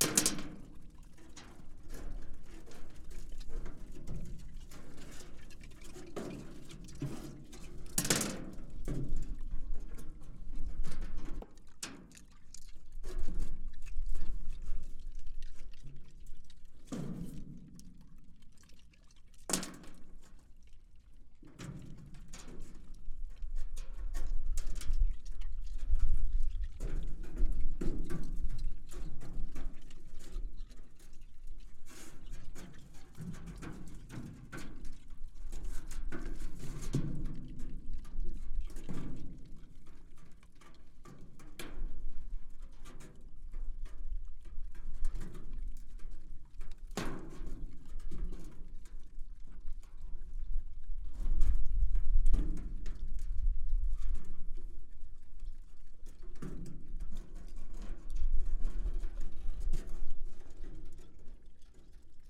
sows reared outdoors on an industrial scale in bare sandy soil continually, audibly chewing on stones which they drop and play with in their empty metal troughs; abnormal behaviour expressing frustration with nothing to forage, a way of managing stress and coping with a poor diet.